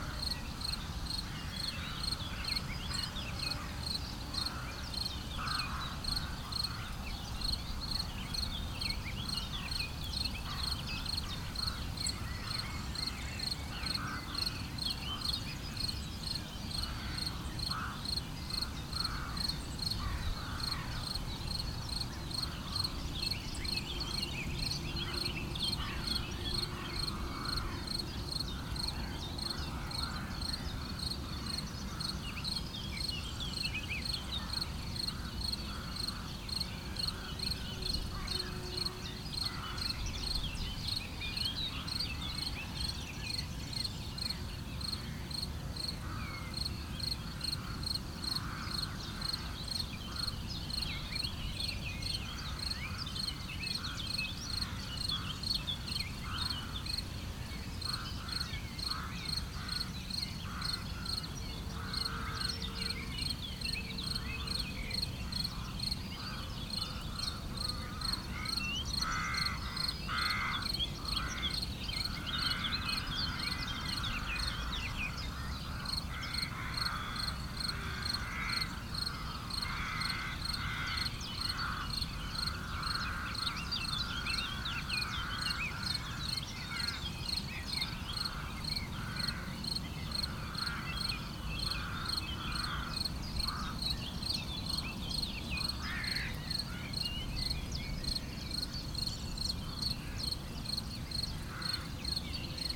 Grozon, France - Into the fields
Into the field early on the morning, sound of the wind, a few background noise of the road, and sometimes a discreet (so beautiful) Yellowhammer.
Poligny, France, 15 June 2017